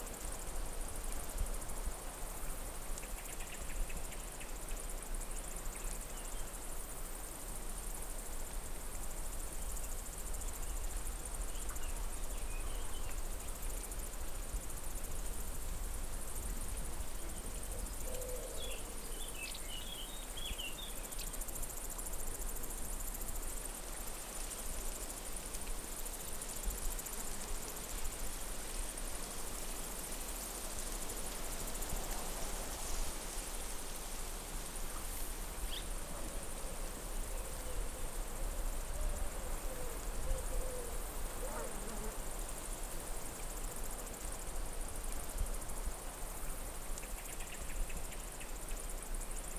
Arribas do Douro em Sao Joao das Arribas. Mapa Sonoro do rio Douro Cliffs in the river Douro. Douro River Sound Map